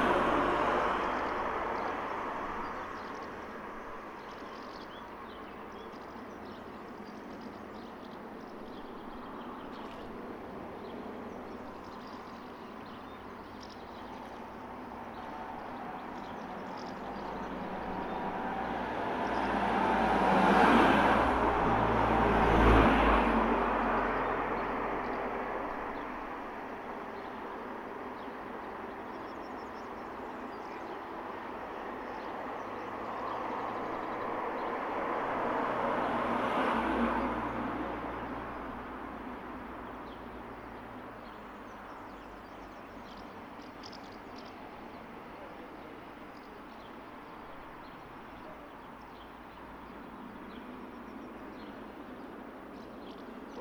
{"title": "Mühlenstraße, Tauberrettersheim, Deutschland - Brücke Tauberrettersheim", "date": "2021-06-17 14:00:00", "description": "Early afternoon on a very hot day. Recorded with an Olympus LS 12 Recorder using the buit in microphones. Microphones facing downstream. A couple of cars passing by. A small aircraft passes overhead. Members of the resident colony of House Martins (Delichon urbicum) can be heard, also ducks and a marsh warbler and other birds from the river and the trees on the riverbanks. Some fish making soft splashing sounds.", "latitude": "49.50", "longitude": "9.94", "altitude": "229", "timezone": "Europe/Berlin"}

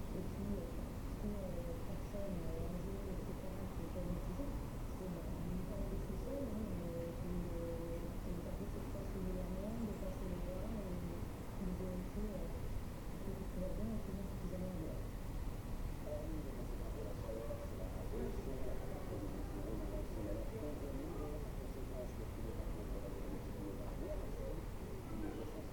{"title": "Juan-les-Pins, Antibes, France - All the bells striking twelve", "date": "2013-07-19 12:00:00", "description": "There are several bells close to the place we are staying in and they all have a slightly different idea of when exactly the hour should be struck. Here are all the bells striking twelve noon, recorded from the sunny window ledge with the EDIROL R-09.", "latitude": "43.58", "longitude": "7.13", "altitude": "11", "timezone": "Europe/Paris"}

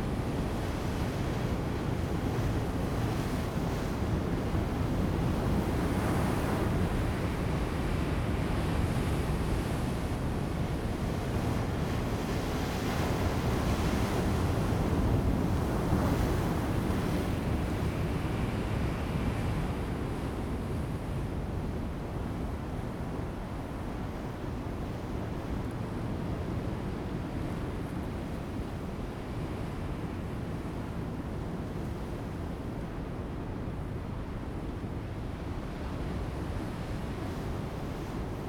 {"title": "Gangzai, Manzhou Township - Beside the fishing port", "date": "2018-04-02 14:12:00", "description": "Beside the fishing port, wind sound, Sound of the waves, Tetrapods\nZoom H2n MS+XY", "latitude": "22.14", "longitude": "120.89", "altitude": "4", "timezone": "Asia/Taipei"}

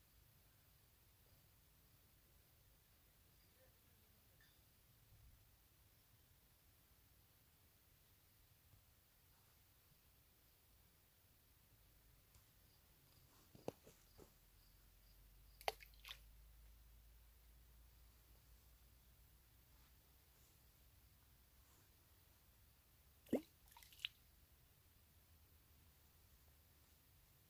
{"title": "Tsivlou Lake - pebbles in the lake", "date": "2021-10-09 22:43:00", "latitude": "38.08", "longitude": "22.23", "altitude": "715", "timezone": "Europe/Athens"}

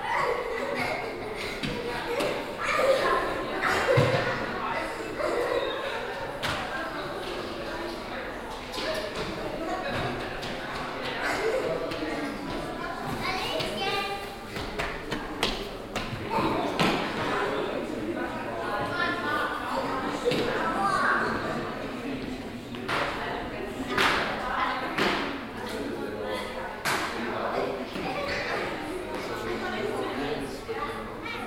{"title": "stuttgart, rathaus, public exhibition", "date": "2010-06-20 13:50:00", "description": "visitor ambience at an exhibition on the 4th floor of the building\nsoundmap d - social ambiences and topographic field recordings", "latitude": "48.77", "longitude": "9.18", "altitude": "250", "timezone": "Europe/Berlin"}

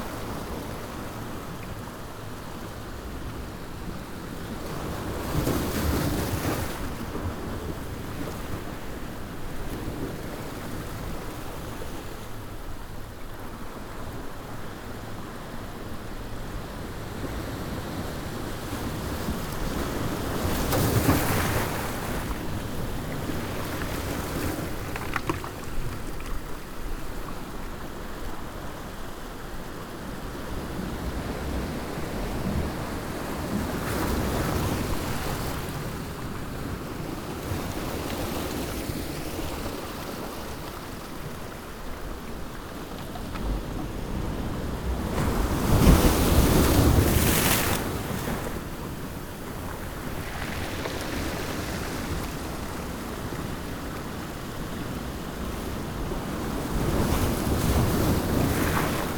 {"title": "Portugal - Breaking waves", "date": "2012-12-01 01:46:00", "description": "Ponta do Sol, breaking waves against a concrete blocks, wind and rocks, church audio binaurals with zoom h4n", "latitude": "32.68", "longitude": "-17.11", "altitude": "124", "timezone": "Atlantic/Madeira"}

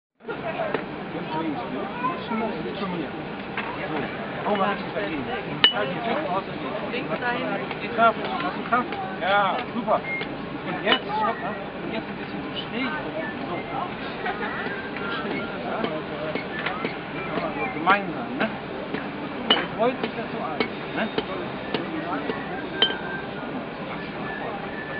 {"title": "Windheim, Petershagen, Germany - 4. Windheimer Schmiedetag", "date": "2011-10-03 14:12:00", "description": "A small boy is being instructed during the blacksmith day in Windheim.", "latitude": "52.42", "longitude": "9.01", "altitude": "40", "timezone": "Europe/Berlin"}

